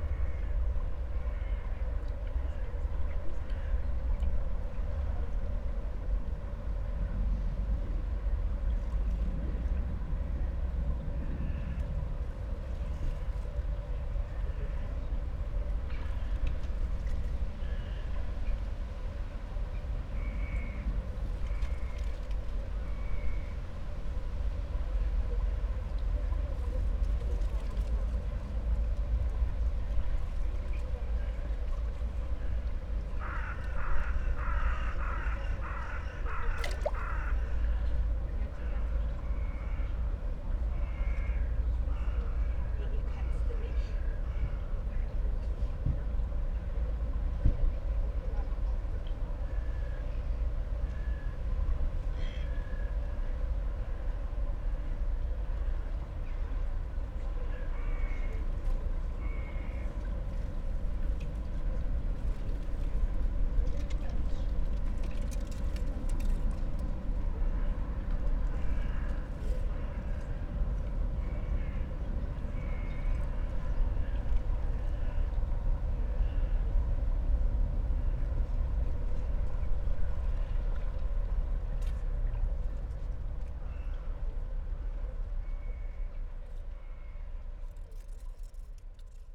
frankfurt/oder, holzmarkt: river bank - the city, the country & me: ambience at the oder river
ambience at the oder river
the city, the country & me: september 27, 2014